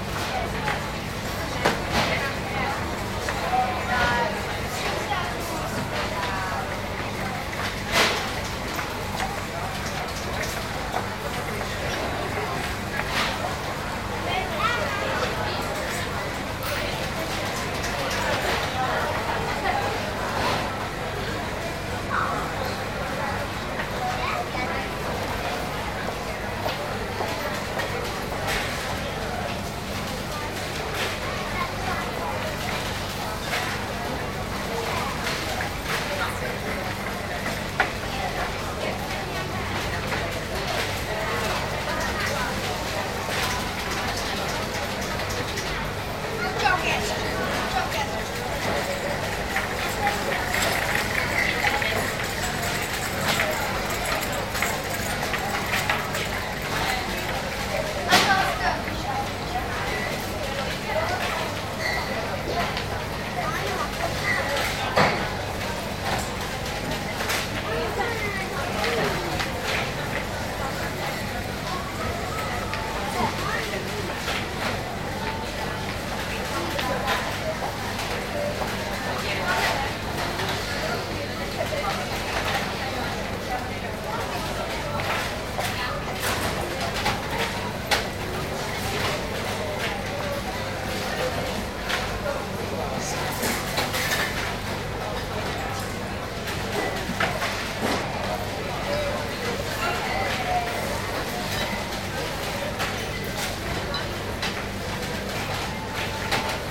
budapest, blaha lujza tér, supermarket
inside an hungarian, german supermarket, crowded with trolleys passing bye
international city scapes and social ambiences
Magyarország, European Union